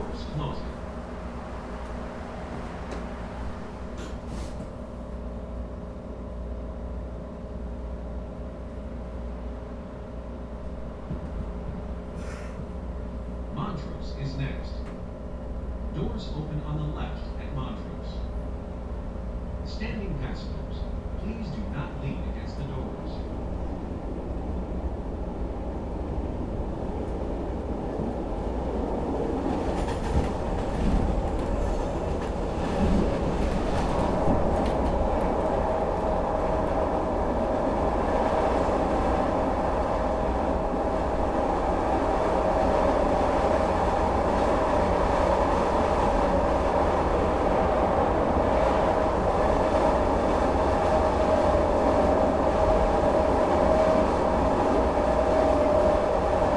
Jefferson Park, Chicago, IL, USA - CTA Blue Line train from Jefferson Park to Addison
Part of my morning commute on a Blue Line train beginning at Jefferson Park CTA station, through Addison station. Each station on this excerpt sits in the meridian of Interstate 90, known locally as the Kennedy Expressway.
Tascam DR-40.